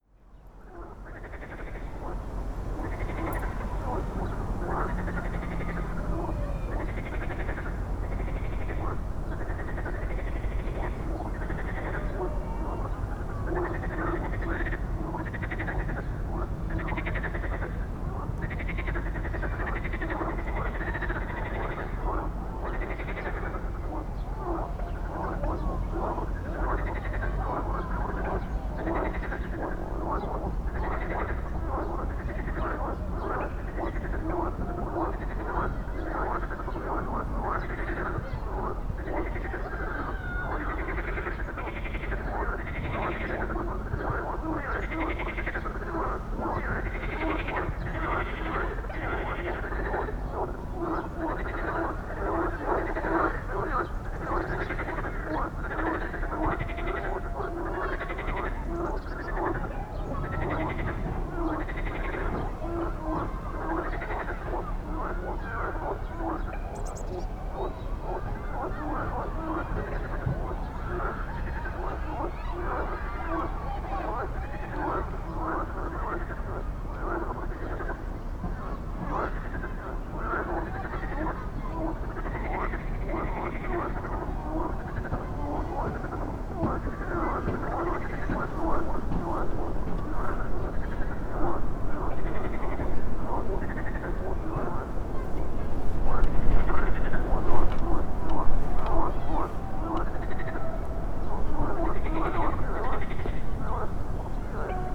Poznan, Strzeszyn Grecki neighborhood, Homera Street - frogs and soccer match
forgs in artificial pond near a school. kids having a break between classes. some construction nearby. (sony d50)
Poznań, Poland, April 2018